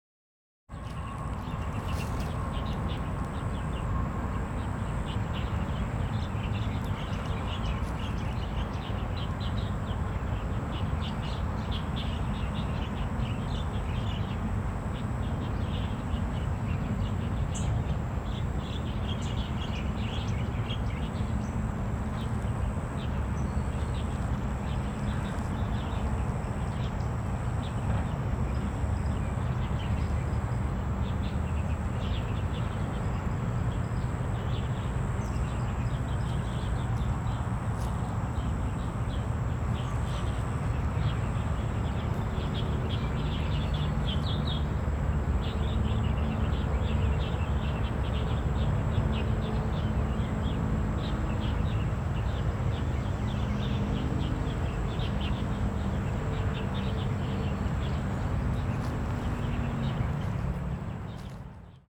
In Riverside Park, Under the bridge, Traffic Sound
Zoom H4n +Rode NT4
New Taipei City, Taiwan, 19 January, 15:26